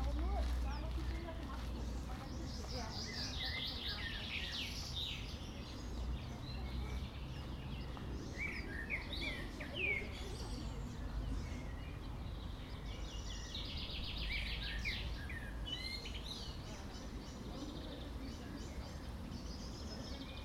Birds at Schloss Charlottenburg. People pass by in conversation, footsteps on gravel.
recorded with Tascam DR 100 mkiii